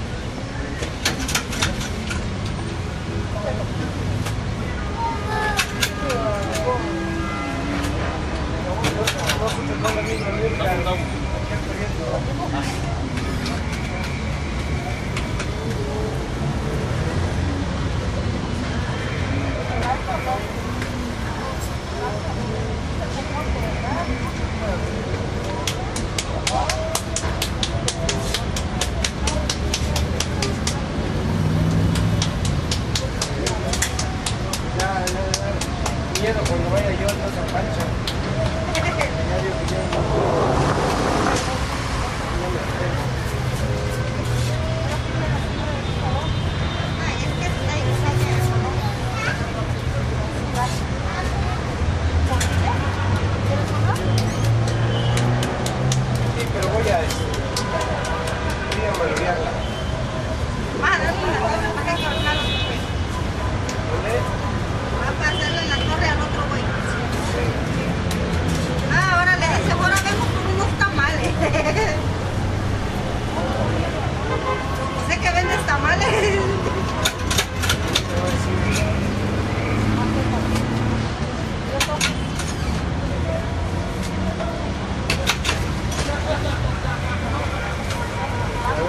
Colonia Centro, Cuauhtémoc, Mexico City, Federal District, Mexico - tortillas y cuernos
Tráfico intenso en la rotatória del Paseo de la Reforma, mientras cerca tostavan tortillas calientitas!